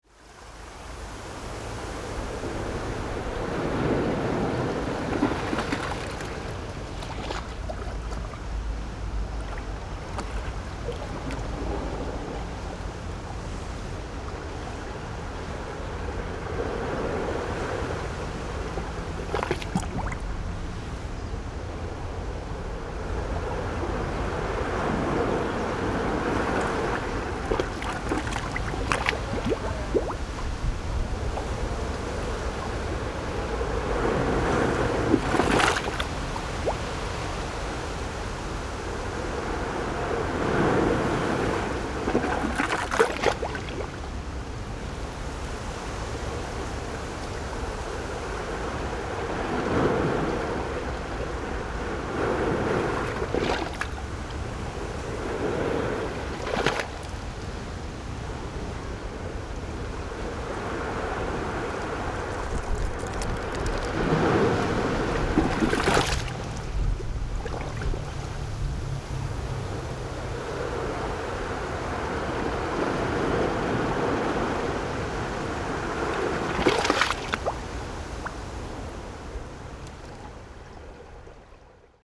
淡水海關碼頭, New Taipei City - Waves
Wind, Waves, Small pier
Sony PCM D50